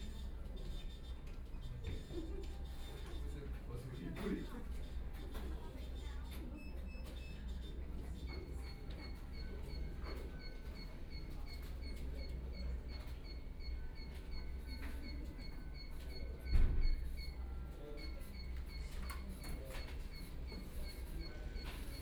Guangming Rd., Beitou - In the bank
In the bank, Binaural recording, Zoom H6+ Soundman OKM II
Taipei City, Taiwan